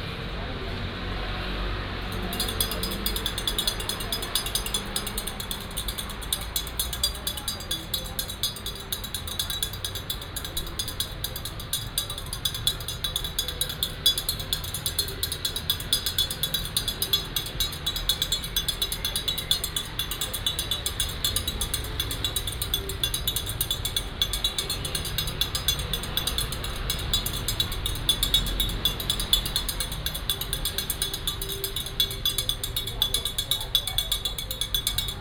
{"title": "Sec., Zhonghua Rd., North Dist., Hsinchu City - Folk rituals", "date": "2017-02-13 15:23:00", "description": "Folk rituals, Traffic Sound, 收驚 (Siu-kiann, Exorcise)", "latitude": "24.80", "longitude": "120.97", "altitude": "27", "timezone": "GMT+1"}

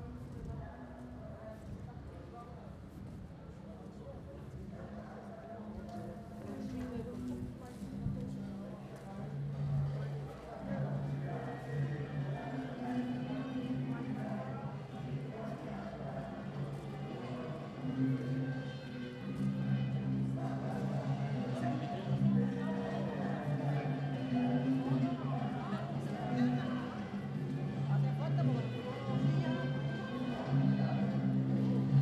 {"title": "Havana, Cuba - Evening walk in La Habana Vieja", "date": "2009-03-20 20:30:00", "description": "Early evening walk in Old Havana, including belly dance (!) performance in Plaza de la Cathedral.", "latitude": "23.14", "longitude": "-82.35", "altitude": "10", "timezone": "America/Havana"}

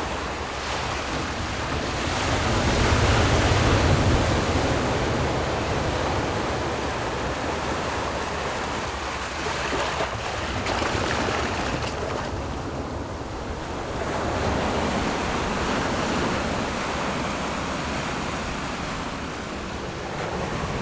{"title": "五結鄉季新村, Yilan County - Sound of the waves", "date": "2014-07-29 10:58:00", "description": "Hot weather, In the beach, Sound of the waves, There are boats on the distant sea\nZoom H6 MS+ Rode NT4", "latitude": "24.67", "longitude": "121.84", "altitude": "6", "timezone": "Asia/Taipei"}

